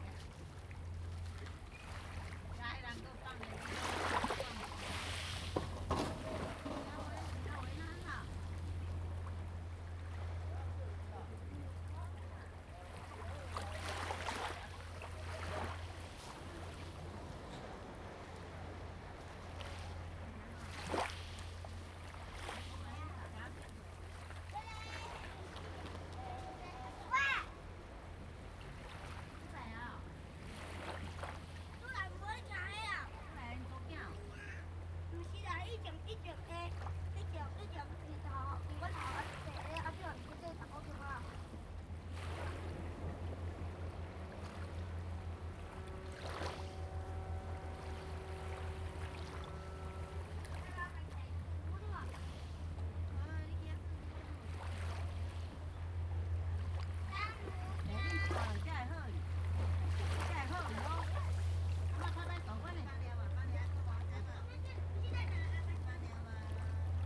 漁福漁港, Hsiao Liouciou Island - Small beach
Small beach, Sound of the waves
Zoom H2n MS +XY
Liuqiu Township, Pingtung County, Taiwan, 1 November 2014, ~16:00